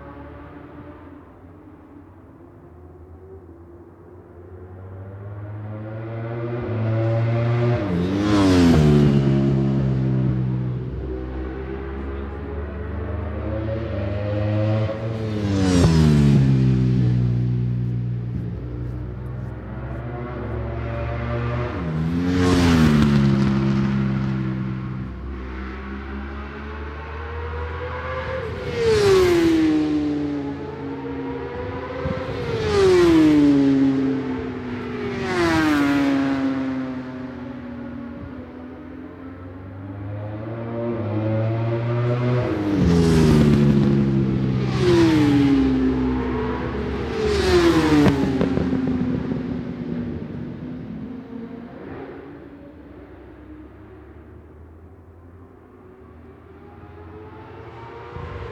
Brands Hatch GP Circuit, West Kingsdown, Longfield, UK - british superbikes 2004 ... superbikes ...
british superbikes 2004 ... superbikes qualifying two ... one point stereo mic to minidisk ...